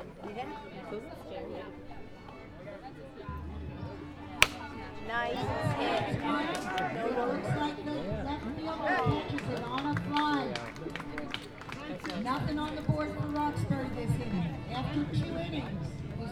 neoscenes: behind the backstop
behind the baseball backstop, in the bleachers, classic baseball, no gloves, hand-turned bats, and a casual atmosphere...